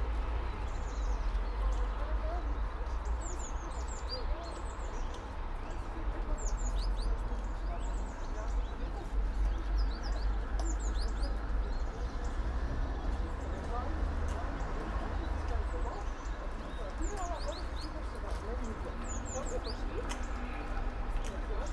all the mornings of the ... - feb 18 2013 mon
Maribor, Slovenia, 18 February